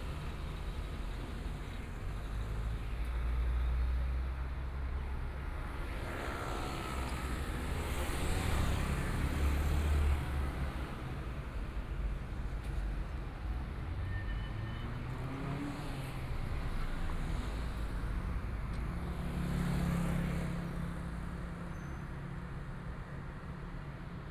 walking on the Road, Traffic Sound, Environmental sounds, Sound from various of shops and restaurants
Please turn up the volume
Binaural recordings, Zoom H4n+ Soundman OKM II
Hualien County, Taiwan, 24 February 2014